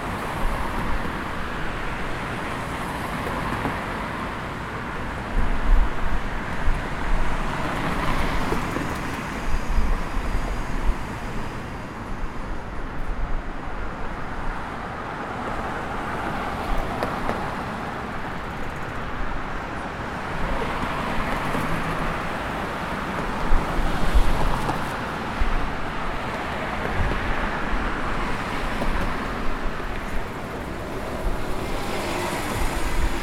E North Water St, Chicago, IL, USA - Piooner Fountain
Pioneer fountain recording for Eco Design 2017
2 October 2017, ~17:00